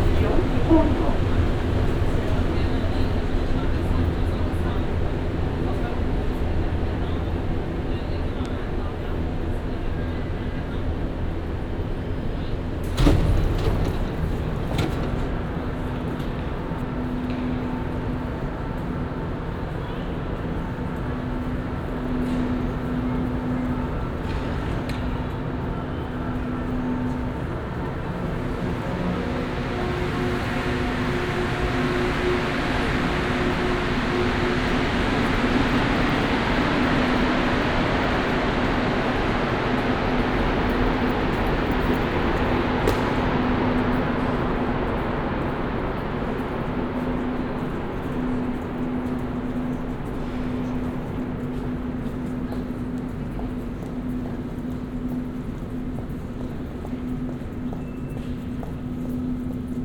{"title": "Montreal: Rosemont Metro - Rosemont Metro", "date": "2009-02-15 20:00:00", "description": "equipment used: Olympus LS-10 w/ Soundman OKM II Binaural Mic\nFrom the metro to the escalator to outside. Listen for footsteps.", "latitude": "45.53", "longitude": "-73.60", "altitude": "74", "timezone": "America/Montreal"}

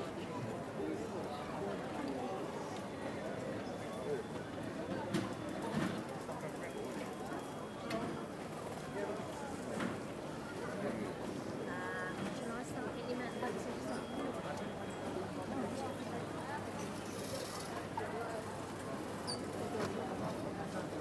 {"title": "london stansted, check-in", "description": "recorded july 19, 2008.", "latitude": "51.89", "longitude": "0.26", "altitude": "117", "timezone": "GMT+1"}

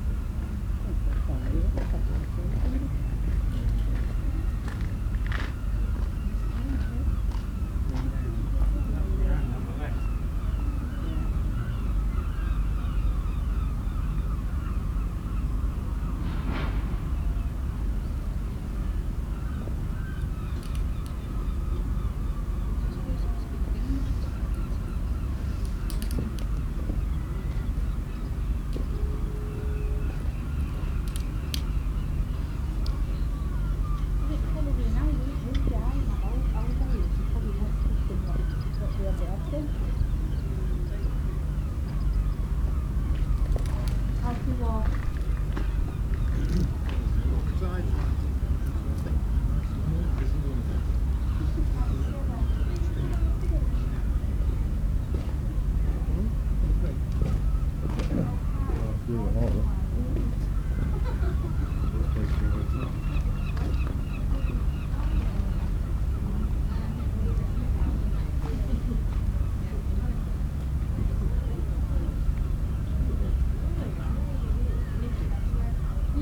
{"title": "Whitby, UK - St Marys Church clock striking 12:00 ...", "date": "2015-07-24 11:50:00", "description": "St Mary's clock striking midday ... voices ... people walking around the church yard ... noises from the harbour ... open lavalier mics clipped to sandwich box lid ... perched on rucksack ...", "latitude": "54.49", "longitude": "-0.61", "altitude": "39", "timezone": "Europe/London"}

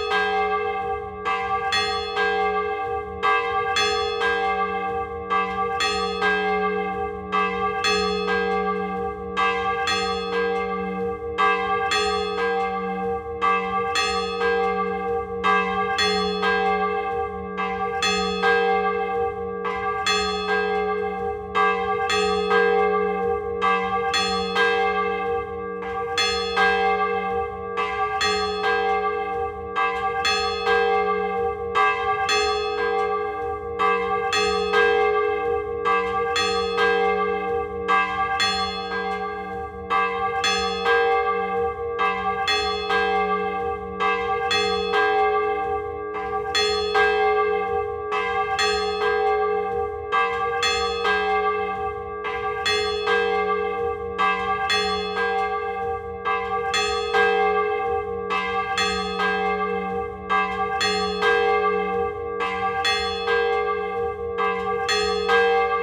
{"title": "Le Bourg-Nord, Tourouvre au Perche, France - Prépotin (Parc Naturel Régional du Perche) - église", "date": "2020-10-14 11:00:00", "description": "Prépotin (Parc Naturel Régional du Perche)\néglise - Le Glas", "latitude": "48.61", "longitude": "0.58", "altitude": "270", "timezone": "Europe/Paris"}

